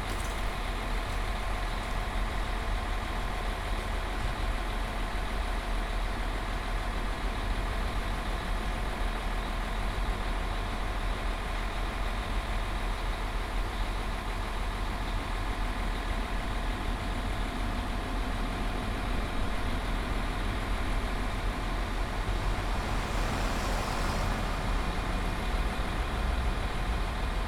{
  "title": "Wrocław, near train station",
  "date": "2010-09-02 10:03:00",
  "description": "maintenance train waiting",
  "latitude": "51.10",
  "longitude": "17.03",
  "timezone": "Europe/Warsaw"
}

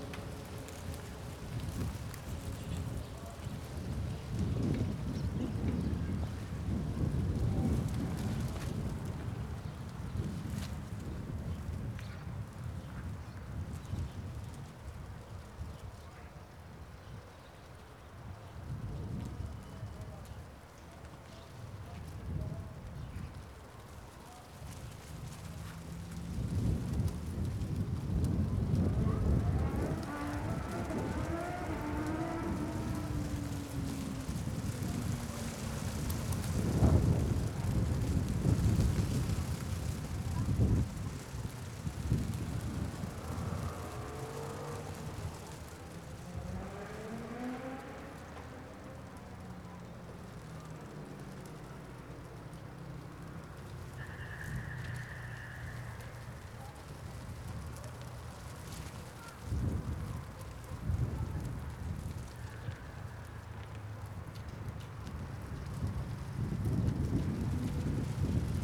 {
  "title": "Srem, Zwirowa Raod - plastic flaps",
  "date": "2014-04-20 15:16:00",
  "description": "recorded in front of a construction site of an apartment building, which is still in raw state. windows already fitted yet still covered in plastic wrap. the plastic is teared up and pieces of wrap flutter in the strong wind. the fence of the construction site rattles in the wind. speeding motor bike roars over the city.",
  "latitude": "52.09",
  "longitude": "17.00",
  "altitude": "80",
  "timezone": "Europe/Warsaw"
}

